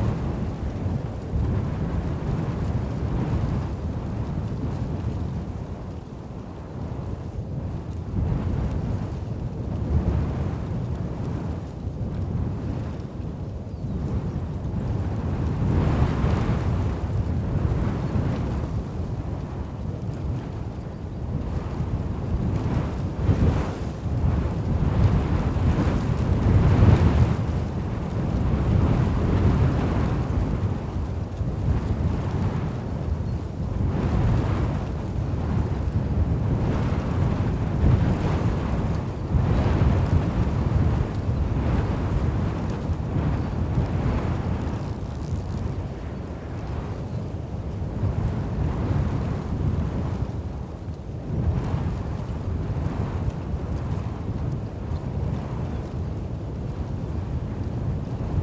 Tall Grass Prairie - Wind blowing in the countryside during spring, Tall Grass Prairie, Oklahoma, USA
Wind blowing in a field in Tall Grass Prairie Reserve. Sound recorded by a MS setup Schoeps CCM41+CCM8 Sound Devices 788T recorder with CL8 MS is encoded in STEREO Left-Right recorded in may 2013 in Oklahoma, USA.